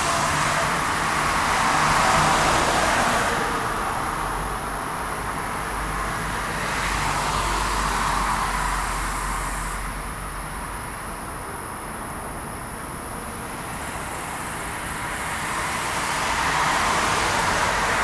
Govalle, Austin, TX, USA - Wedge to Road
Recorded onto a Marantz PMD661 with a pair of DPA 4060s under the blue moon.